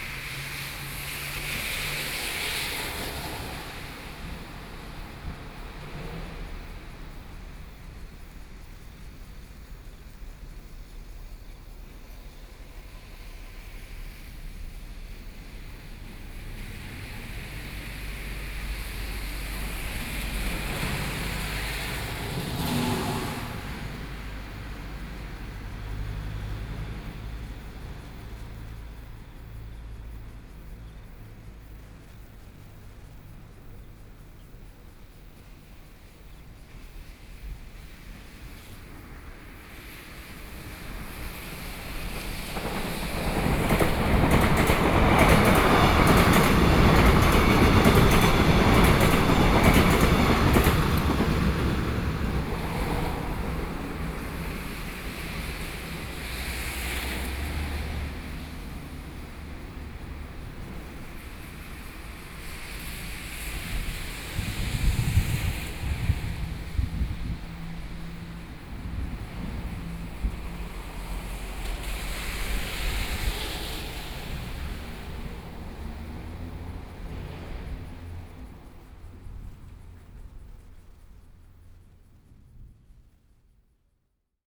Fugang, Taoyuan County - Intersection
Intersection, traffic noise, Thunder, Train traveling through, Sony PCM D50+ Soundman OKM II
Yangmei City, Taoyuan County, Taiwan